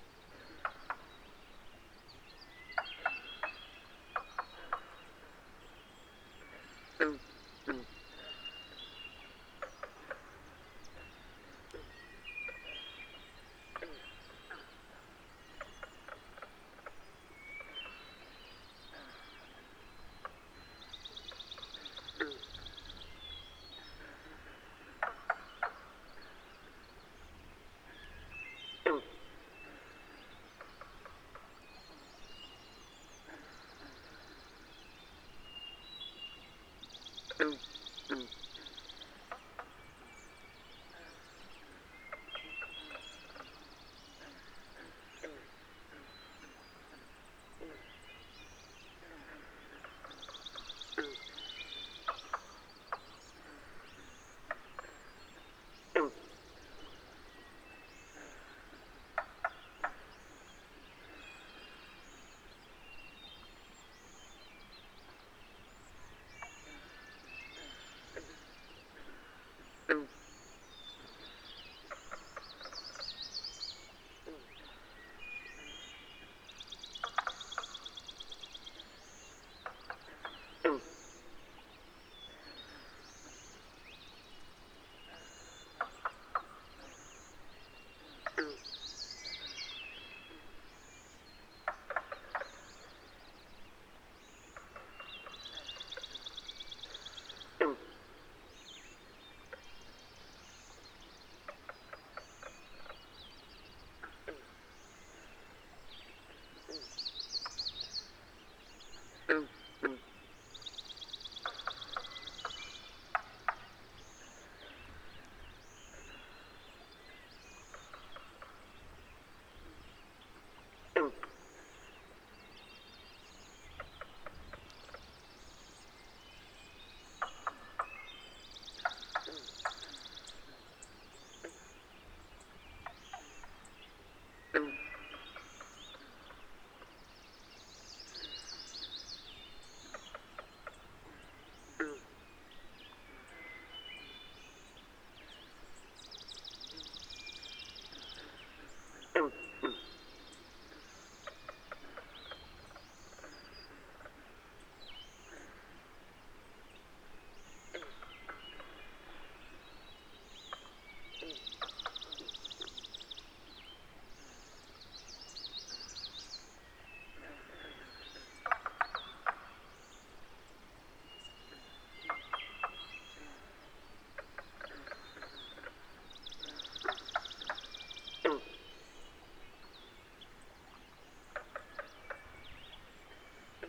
Summer Wetland, Ellen Brown Lake Road. Pictou County, Nova Scotia
Just before sunrise a wetland comes alive with the morning chorus. In the distance a river flows.
World Listening Day
NS, Canada, July 2010